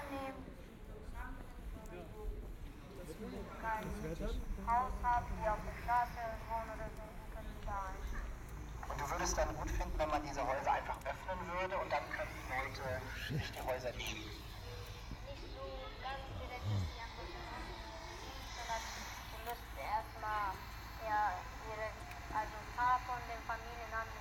Der Leerstand spricht. Bad Orb, Refugees - Leerstandwalkback
'Der Leerstand spricht': From the street musicians a walk back down Hauptstrasse. The moderator is interviewing a youth, who proposes to use the empty houses for refugrees. Binaural recording
Bad Orb, Germany, 14 November 2016